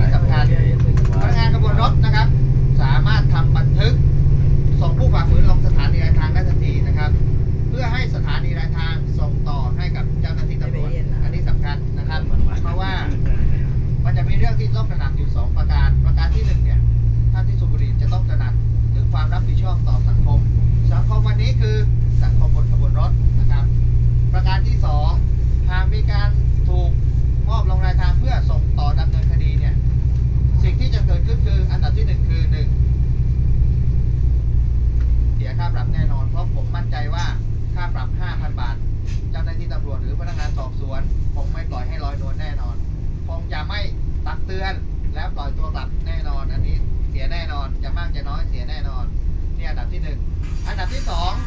In the train from Bangkok to Surathani the conductor is explaning something in quite some length (5 min.?) directly (not via intercom) to the travelers. I the end his translation for me says: no smoking.